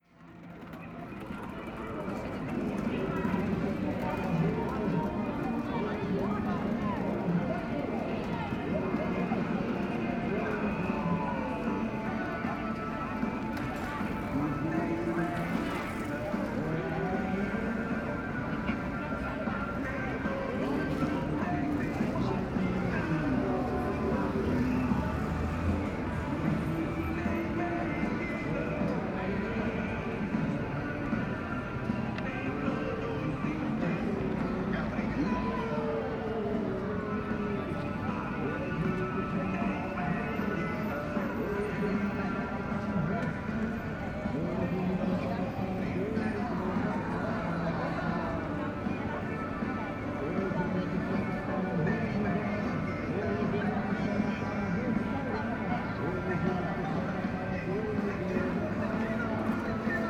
Calçadão de Londrina: Vendedora informal: hippie - Vendedora informal: hippie / informal salesman: hippie
Panorama sonoro: um pequeno aparelho de som instalado em uma barraquinha de uma vendedora informal (hippie) no meio do Calçadão em um sábado à tarde. Ele emitia músicas do estilo rock’n roll brasileiro típico da década de 1970. Sobrepunham-se a elas músicas evangélica e pregação religiosa proveniente da ação de evangelizadores localizados em outra quadra do Calçadão.
Sound panorama: a small stereo set up in a stall of an informal salesperson (hippie) in the middle of the Boardwalk on a Saturday afternoon. He emitted songs of the typical Brazilian rock'n roll style of the 1970s. They were overlaid with gospel songs and religious preaching from the action of evangelizers located in another block of the Boardwalk.